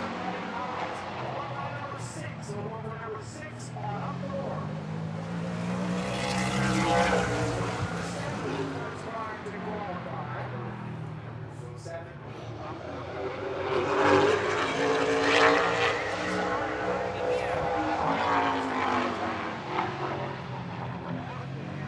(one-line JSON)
{"title": "Madison International Speedway - ARCA Midwest Tour Qualifying", "date": "2022-05-01 12:45:00", "description": "Qualifying for the Joe Shear Classic ARCA Midwest Tour Super Late Model Race at Madison International Speedway. The cars qualify one at a time each getting two laps to set a time.", "latitude": "42.91", "longitude": "-89.33", "altitude": "286", "timezone": "America/Chicago"}